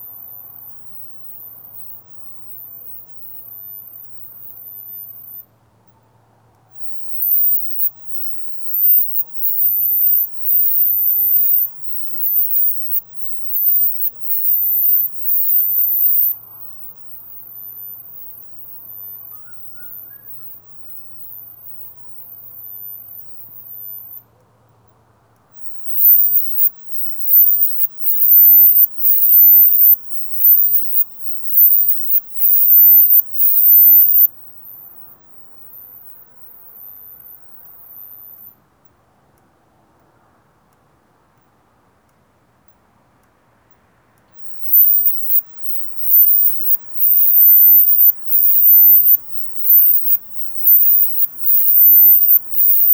France, Ille, repellents / répulsifs - Repellents / Répulsifs
A private house surrounded by 3 cats and dogs repellents. 1 in the foreground.
Ille-sur-Têt, France, 2 November 2009